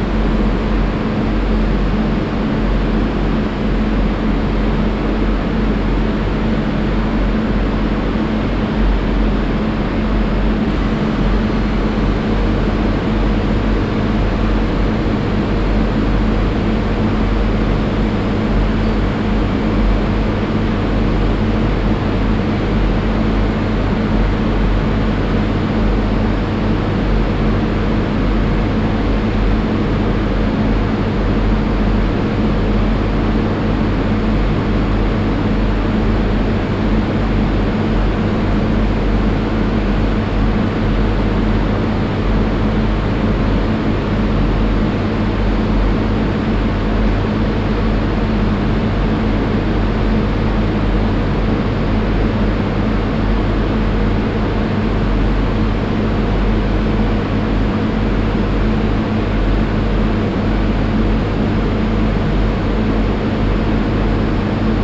Stadt-Mitte, Düsseldorf, Deutschland - Düsseldorf, Discothek Nachtresidenz
Inside the empty two floor hall of the discothek Nachtresidenz ( a former old cinema) - The sounds of the refrigerators and ventilaltion reverbing in the big and high brick stone wall place. At the end an ambulance sirene that comes in from the main street outside.
This recording is part of the intermedia sound art exhibition project - sonic states
soundmap nrw -topographic field recordings, social ambiences and art places